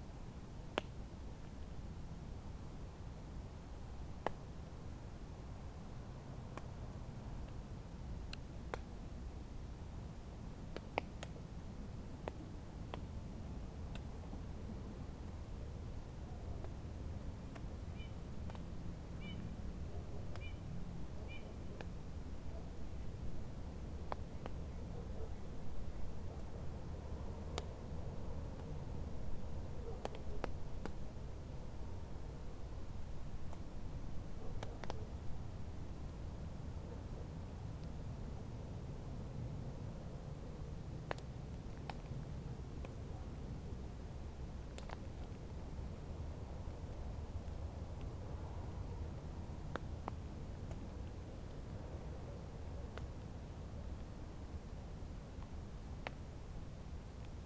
Lithuania, Pakalniai, light rain on fallen leaves